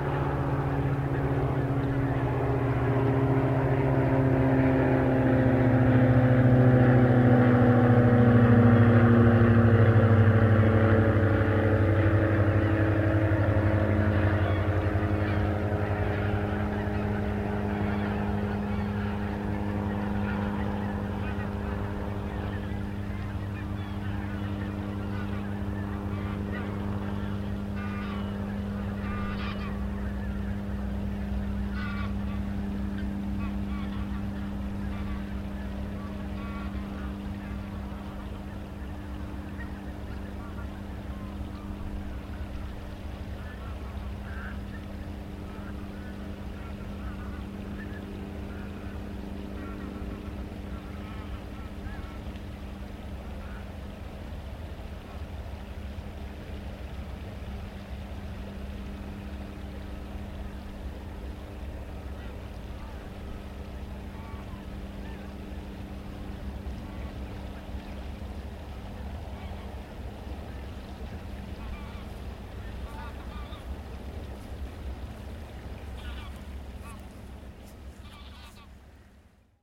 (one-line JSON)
{"title": "Veerweg, Bronkhorst, Netherlands - Kunstgemaal Aeroplane attacking Geese", "date": "2021-01-06 15:55:00", "description": "Aeroplane, geese. Road and river traffic in distance\nZoom H1.", "latitude": "52.07", "longitude": "6.17", "altitude": "6", "timezone": "Europe/Amsterdam"}